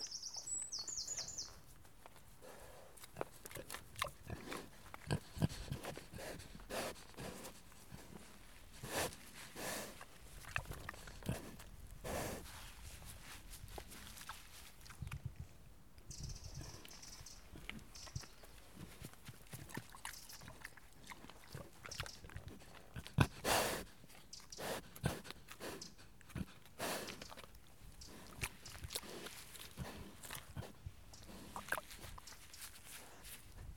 {
  "title": "Atherington, UK - Pigs grunt, sniff, eat",
  "date": "2016-11-27 12:08:00",
  "description": "Two Kunekune pigs run up grunting and are satiated with snacks.\nRecorded w/ Zoom H4n internal mics",
  "latitude": "51.00",
  "longitude": "-4.02",
  "altitude": "102",
  "timezone": "GMT+1"
}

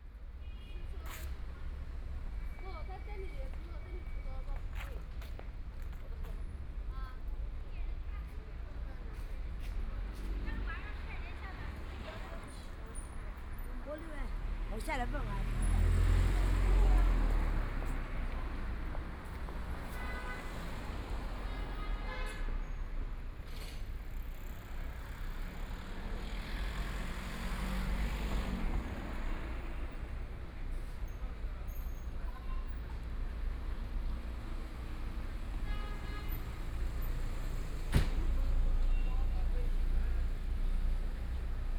{
  "title": "Fenyang Road, Shanghai - walking in the Street",
  "date": "2013-12-03 14:49:00",
  "description": "Follow the footsteps, Walking on the street, Traffic Sound, Binaural recording, Zoom H6+ Soundman OKM II",
  "latitude": "31.21",
  "longitude": "121.45",
  "altitude": "17",
  "timezone": "Asia/Shanghai"
}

